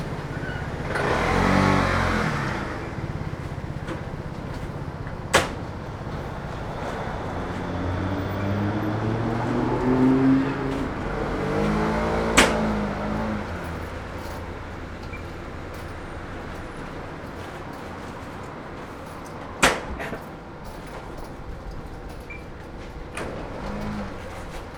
a courier loading parcels into InPost pickup compartments. he's working in a hurry. you can hear him slamming the doors of the compartments. traffic and people leaving the store as well as purchasing fruit on the stand nearby. (roland r-08)